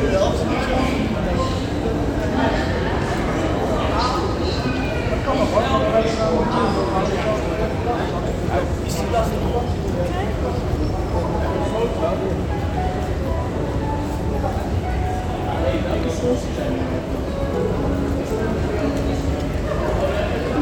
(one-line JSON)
{"title": "Zwolle, Zwolle, Nederland - Soundwalk Trainstation Zwolle, Netherlands", "date": "2022-09-27 17:00:00", "latitude": "52.51", "longitude": "6.09", "altitude": "4", "timezone": "Europe/Amsterdam"}